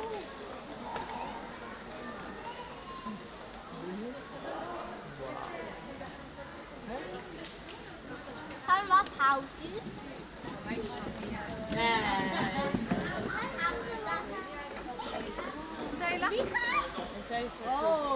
Blijdorp, Rotterdam, Nederland - A walk through the zoo
It was way too crowded. And it was too hot for the animals to do anything at all. So I thought it was a good idea to record the visitors around me while walking through the zoo.
Het was te druk. En het was te heet voor de dieren om ook maar iets te doen. Het leek me een goed om dan maar de bezoekers op te nemen terwijl ik door het park liep.
Binaural recording
Rotterdam, Netherlands, 22 July 2016, 16:30